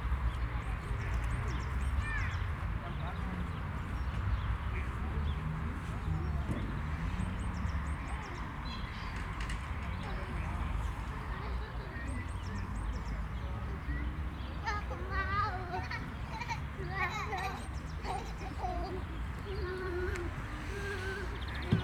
Ahrensfelde, Deutschland - playground near river Wuhle
playground ambience near river Wuhle, which is rather a narrow canal at this place, almost no flow. the place isn't very pleasant at all, and there are more grown-ups than kids.
(SD702, DPA4060)